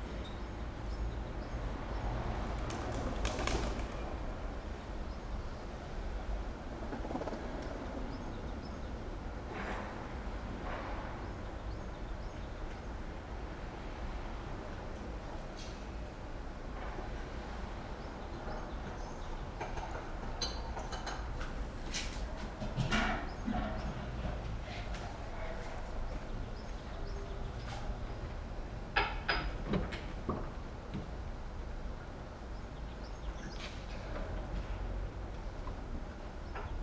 from/behind window, Mladinska, Maribor, Slovenia - pigeon, construction workers, auto and radio, me
morning times, late september 2013
27 September, 07:47